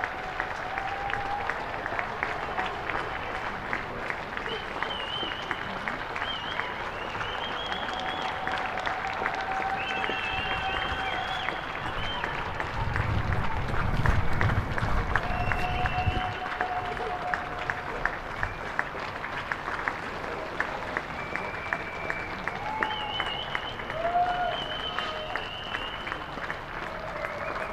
Devínska Nová Ves, Bratislava, Slovakia - Devínska Nová Ves, Bratislava: Applause for Slovak Doctors, Nurses and All Frontline Fighters of Covid-19
People went out on their balconies and to the streets of their neighborhood in Devínska Nová Ves to applaud and show their support and gratitude for healthcare staff and all other people trying to protect everyone from Corona virus.
Bratislavský kraj, Slovensko, 20 March 2020